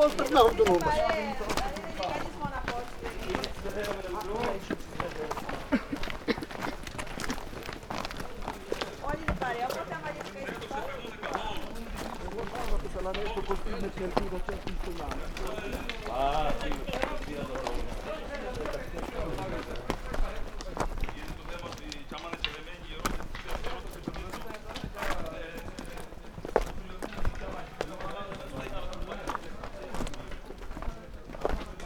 Crete, Lefka Ori, Samaria Gorge - hikers
hikers going down the trail.